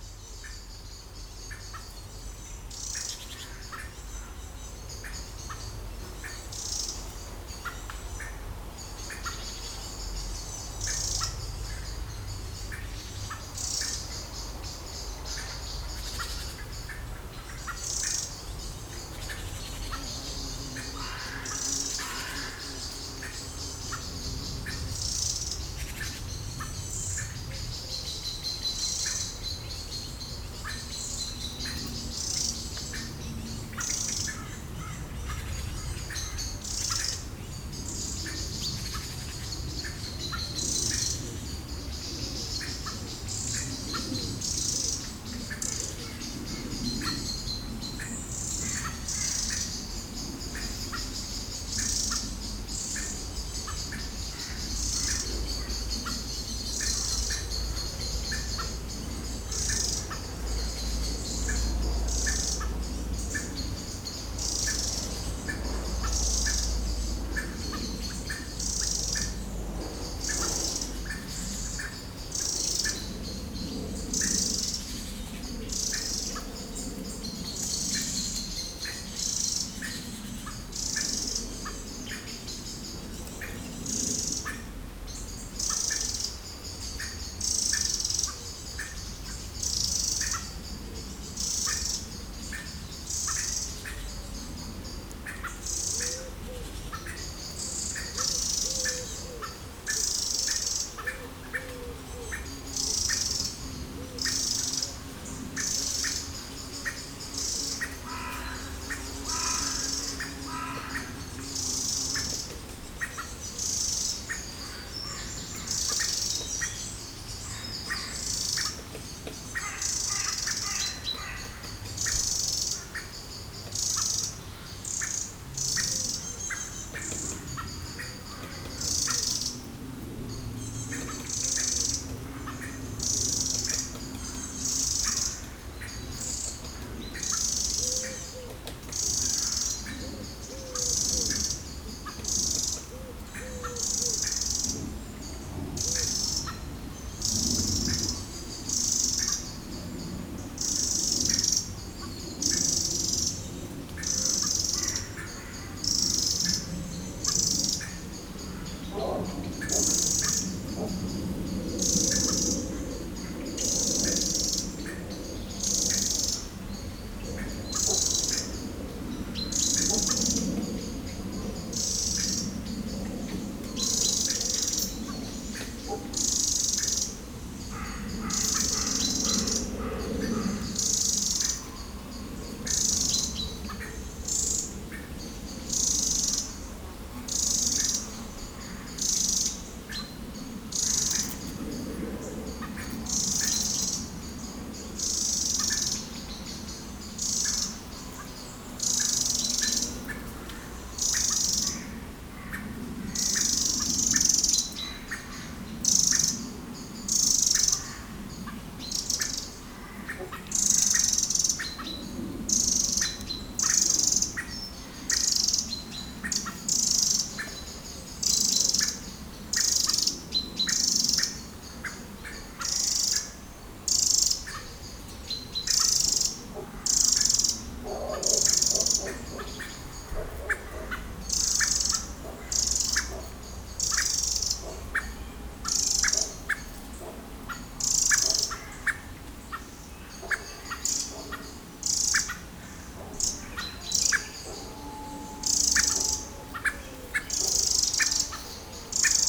Saint-Martin-de-Nigelles, France - Blackbirds fighting
On the forest a very hot summer day, a lot of mosquitoes, and two blackbirds fighting. It's a territorial fight involving two males.
19 July 2018, 11:30